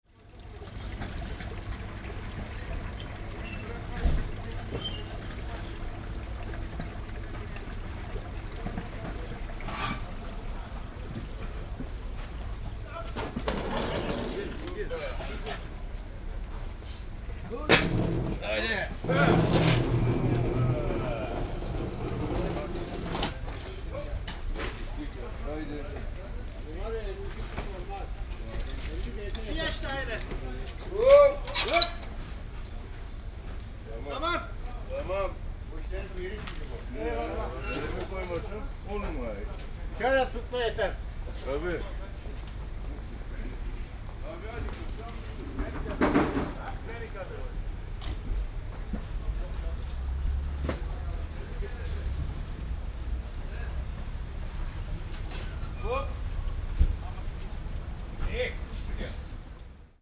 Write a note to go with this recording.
09.09.2008 9:15, vor marktöffnung, wagen (trockenfrüchte und nüsse) wird von arbeitern in position geschoben. wasser läuft in kanal. before market opening, workers move stand in right position (fruits & nuts). water flows in gully.